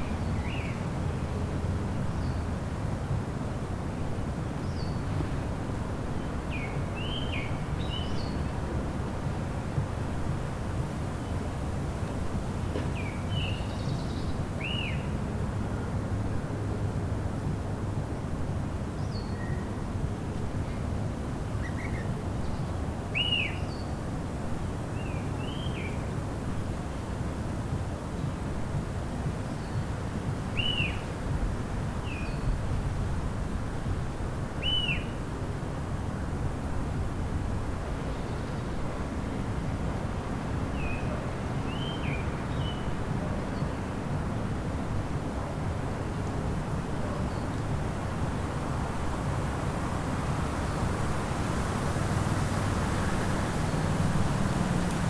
First day of the year. Too hot. Looking for some shadow to record.